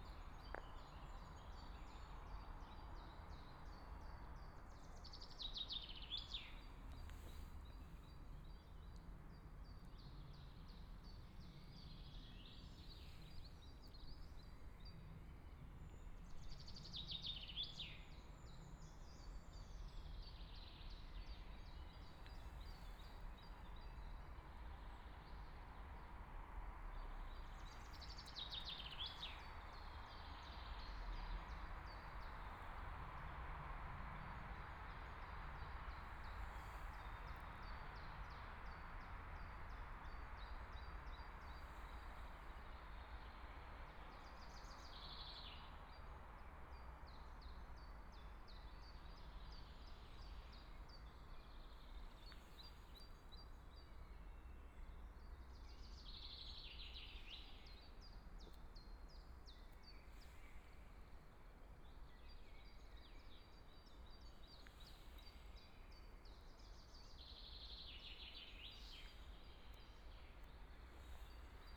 Hohenkammer, Germany - Trees and birds
In the woods, birds, Traffic Sound
11 May, ~16:00